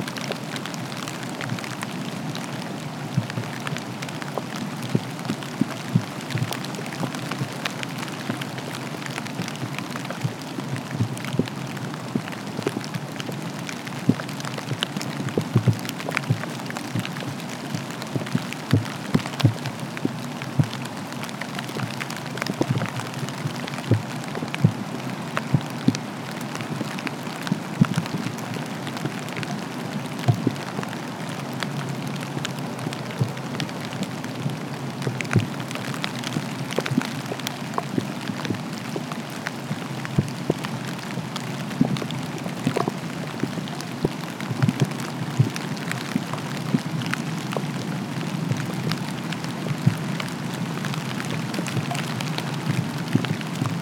Auf dem Sand, Dresden, Germany - (437 ORTF) Drizzle rain on a windy Sunday afternoon
Recorder left in a slight drizzle, so there is plenty of peaks coming from recorder housing getting hit by raindrops.
Recorded with Sony PCM D-100.
Sachsen, Deutschland, 2 December 2018, 15:40